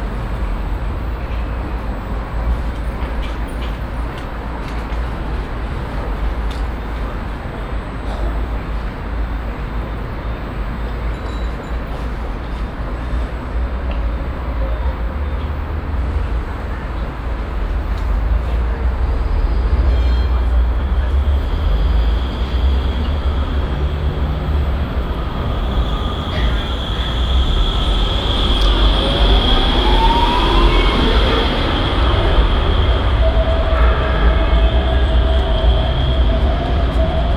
Bahnhofsvorstadt, Bremen, Deutschland - bremen, main station place, tram station
At the tram station place before the bremen main station, The sound of several trams coming in, stopping and leaving the station again.
soundmap d - social ambiences and topographic field recordings
13 June 2012, 17:00